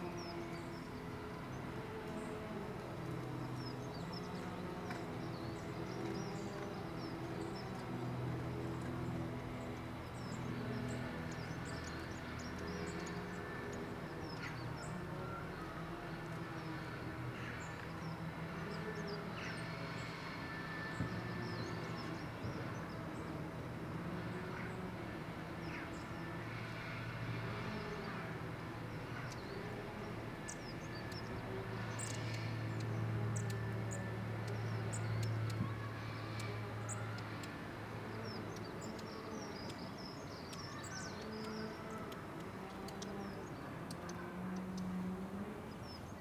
Contención Island Day 70 inner west - Walking to the sounds of Contención Island Day 70 Monday March 15th
The Drive Westfield Drive Parker Avenue Beechfield Road
Old bricks
dropping mortar
and crowned in ivy
The whine and growl of builders
ricochets around
hard to localise
In bright sun
passing walkers are well wrapped up
a chill wind blows
North East England, England, United Kingdom